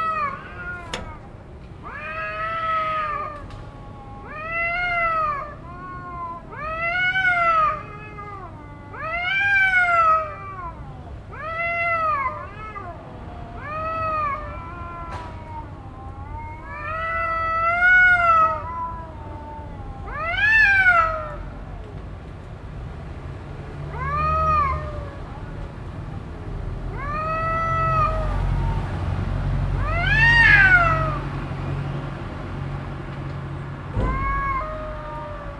Tehran Province, Tehran, Yazdanniyaz, No., Iran - Street cats
14 October 2019, 18:34